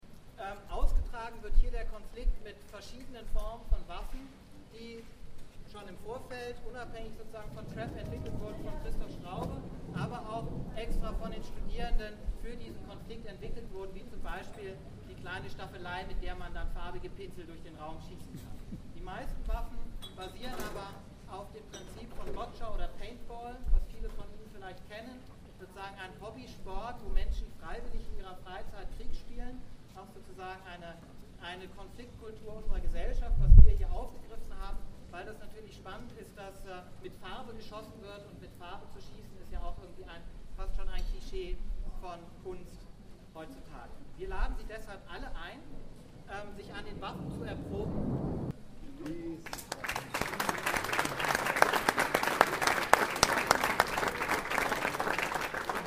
Nürnberg, CRAP 2
Friedrich von Borries opening the CRAP exhibition @ Akademie Galerie.